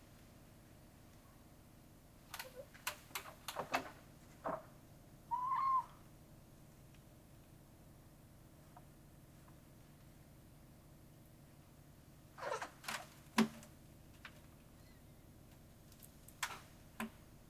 Ave, Queens, NY, USA - Brief meeting between a house cat and two crows
A brief encounter between my cat and two crows perched on the pole next to the window.
United States of America, 3 November 2020, ~07:00